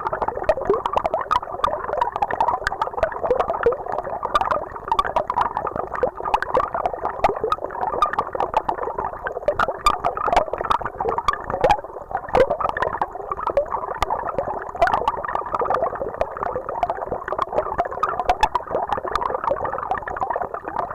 Ottilienquelle, Paderborn, Deutschland - Ottilienquelle unter Wasser
a fountain
of reciprocity
back and forth
appreciating
every offer of yours
never
complaining
about
one of your moves
or moods
a place for swimming
out in the open
sky
11 July 2020, 16:00